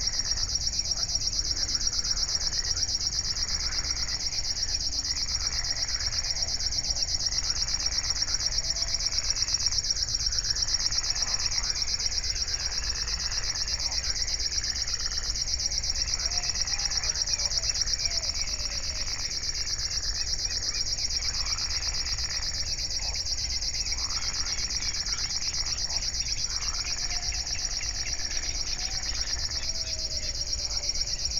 01:18 Berlin, Buch, Moorlinse - pond, wetland ambience
Deutschland, 4 June 2022